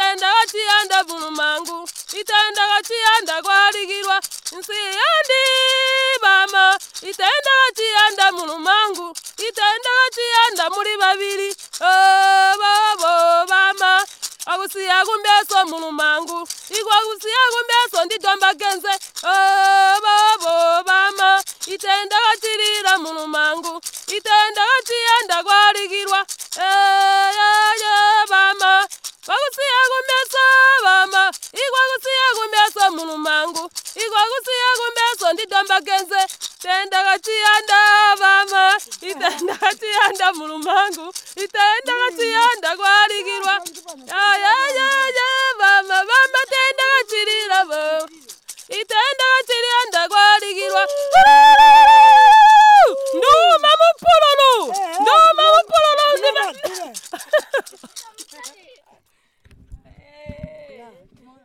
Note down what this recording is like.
Labecca Munkuli sings a song in which a girl laments to her mother about her mistake of getting married too early, dropping out of education, and now, being trapped in the situation while her husband is taking more wives. This song is meant as a warning song for girls and Labecca truly brings it “on stage” as such. a recording made by Margaret Munkuli, community based facilitator for Zubo in Manjolo. a recording from the radio project "Women documenting women stories" with Zubo Trust, a women’s organization in Binga Zimbabwe bringing women together for self-empowerment.